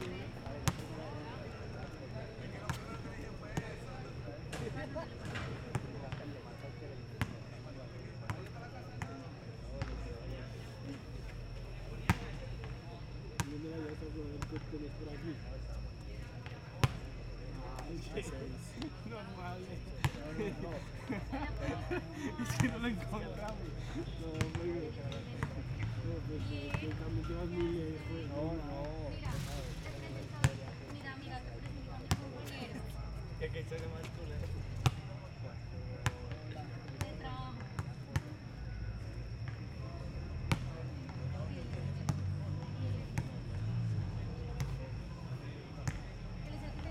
Cl., Medellín, Belén, Medellín, Antioquia, Colombia - Cancha de baloncesto de Los Alpes
Toma de audio / paisaje sonoro de la cancha de baloncesto de Los Alpes realizada con la grabadora Zoom H6 y el micrófono XY a 120° de apertura a las 8:40 pm aproximadamente. Cantidad media de personas al momento de la grabación, se puede apreciar el sonido de las personas hablando en las graderías, el pasar de unas motocicletas y el sonido de un balón de baloncesto rebotando a pocos metros de la grabadora.
Sonido tónico: Personas hablando.
Señal sonora: Motocicleta pasando.
Valle de Aburrá, Antioquia, Colombia, 5 September 2022, 20:40